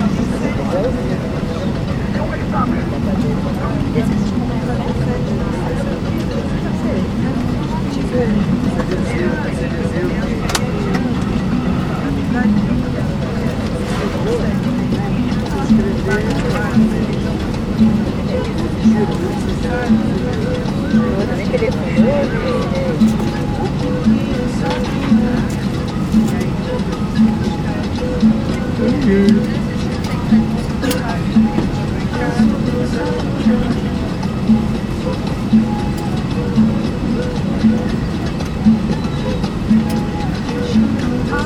Dentro do avião no aeroporto Galeão no Rio de Janeiro, aguardando o vôo para Fortaleza.